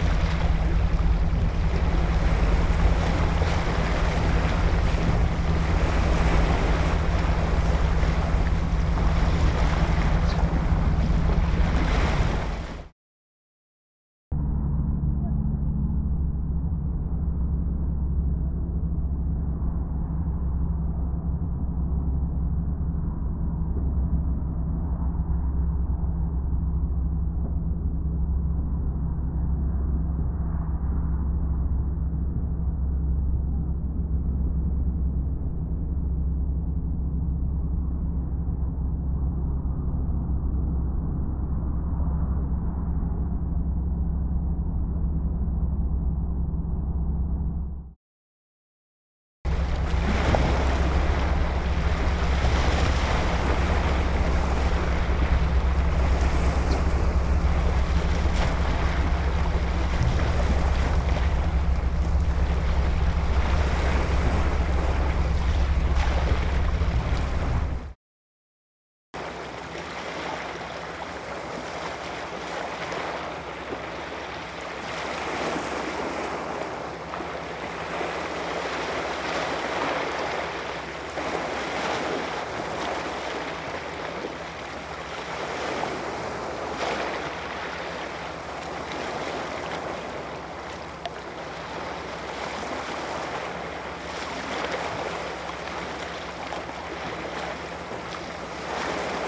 The Samangeum Seawall (새만금 방조제) was completed in 2006 and extends out into the West Sea. Arriving at night we could hear a constant, very dense and largely undifferentiated sound coming from activity out to sea although there were few lights visible on the ocean. The seawall is near the large port of Gunsan. A continuous recording was made, and various filters have been applied so as to explore aspects of the sound that was recorded.